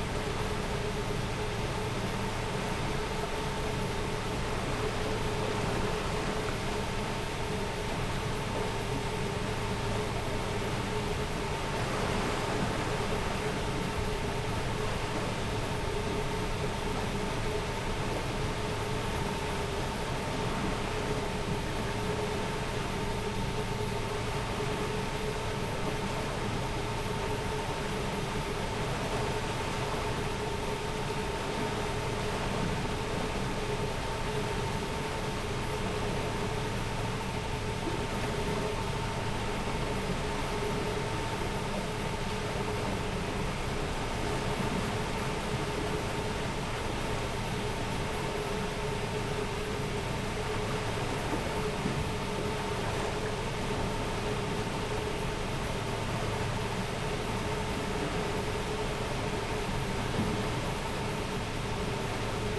Medieval mill on Vltava reiver in Český Krumlov, in 1930's used as hydro power plant. Recording of sound of water flowing through mill race and working turbine reportedly producing electricity for a hotel and bar located in premises of the mill.
Jihozápad, Česká republika, 19 August